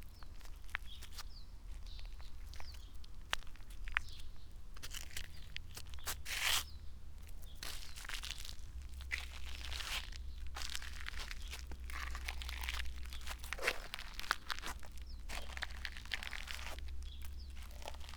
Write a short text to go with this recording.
quiet ambience, very hot summer afternoon, walking over white sand